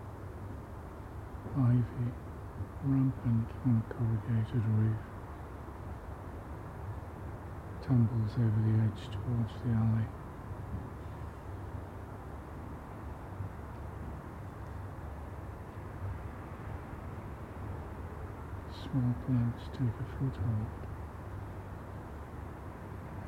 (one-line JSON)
{"title": "Contención Island Day 7 outer north - Walking to the sounds of Contención Island Day 7 Monday January 11th", "date": "2021-01-11 09:49:00", "description": "The Poplars Roseworth Avenue The Grove Moor Park North Alwinton Terrace\nAcross the alley\nbehind a black garage door\nthe churn and tump of a tumble drier\nStories written in the brickwork of back walls\nlintels and sills from coal holes\nlost doors\nA woman opens her garage door\ntakes boxes and bags out of the boot of her BMW\nshe regards me\nI greet her\nRooflines\nsway-backed between loft extensions.", "latitude": "55.01", "longitude": "-1.62", "altitude": "61", "timezone": "Europe/London"}